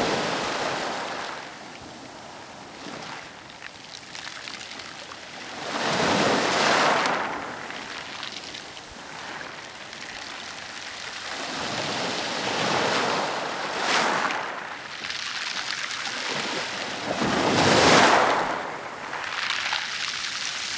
Plage Cap Mala, Cap D'Ail, France - close waves & pebbles
Sitting on a rock in front of the sea, you can hear the waves fizzing and then trickling through the pebbles as they recede.